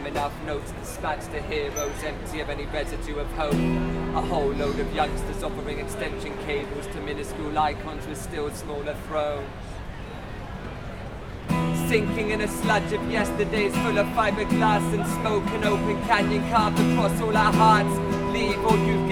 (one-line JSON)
{"title": "Place Jean Jaurès", "date": "2011-10-21 12:06:00", "description": "A Band of Buriers / Happening N°1 / Part 6", "latitude": "43.30", "longitude": "5.39", "altitude": "53", "timezone": "Europe/Paris"}